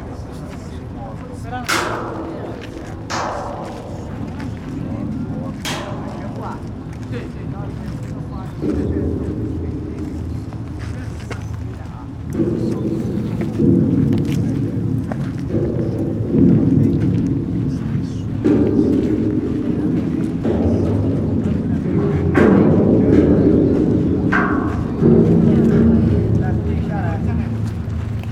playing the Sibelius monument for tourists, Helsinki
recorded during the emporal soundings workshop